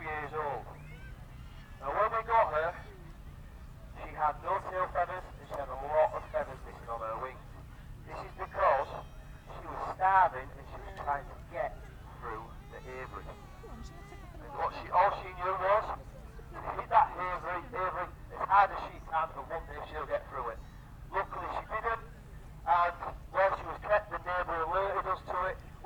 Burniston, UK - Falconry Display ... Burniston and District Show ...
Apollo the eagle owl ... falconer with radio mic through the PA system ... lavalier mics clipped to baseball cap ... warm sunny morning ...